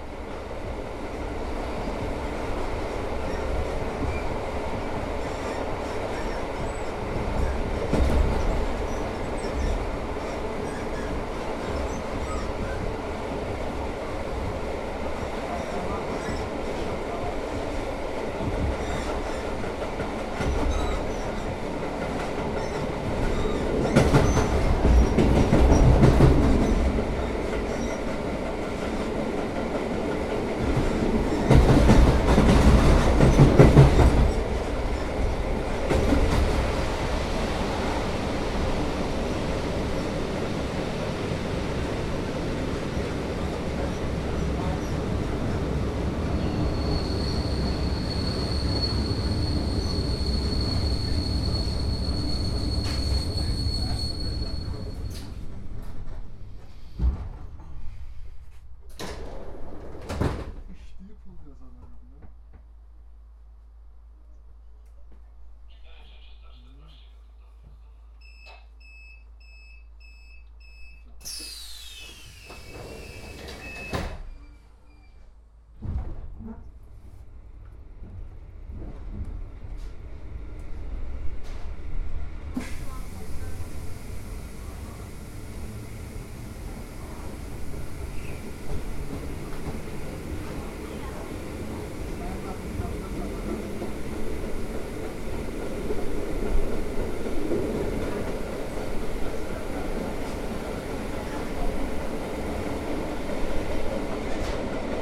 Rail tracks, Katowice, Poland - (822) Old train on clickety-clack tracks
Recording of a train from the inside with recorder placed on a shelf.
Recorded with UNI mics of Tascam DR100mk3
27 June 2021, 14:57